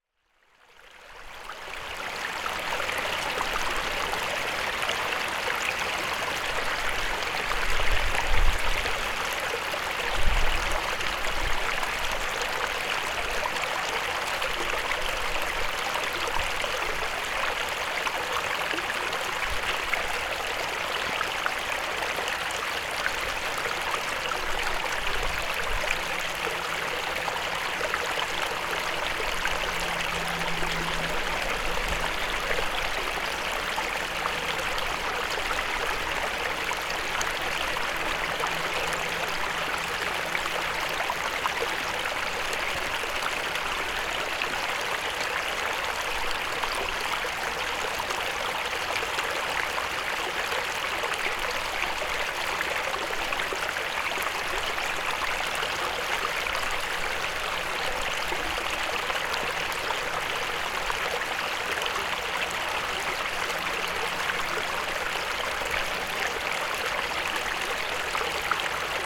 Piechowice, Poland - (887) Mountain brook
Recording from a stone in the brook.
Recorded with Olympus LS-P4.
powiat karkonoski, województwo dolnośląskie, Polska